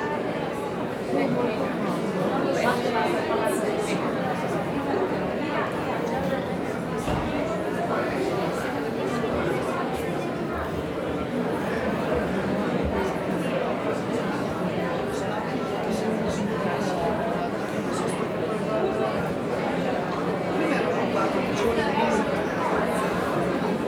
Teatro Manzoni - prima dello spettacolo
crowded indoor teathre
Milan, Italy